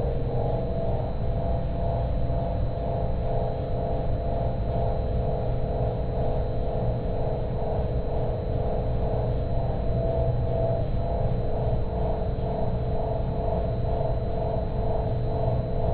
묵호 등대 밑에 under the Mukho lighthouse
묵호 등대 밑에_under the Mukho lighthouse...idyll sinister...
강원도, 대한민국